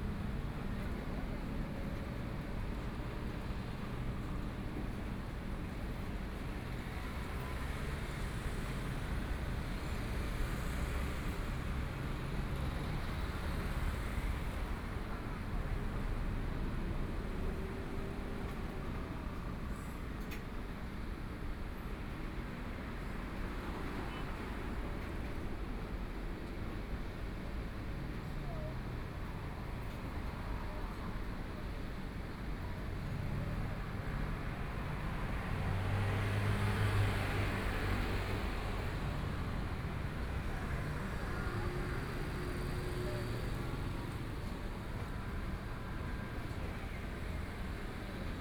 Beitou, Taipei City - Next to the park
in the niu-rou-mian shop, Next to the park, Traffic Sound, Binaural recordings, Zoom H4n + Soundman OKM II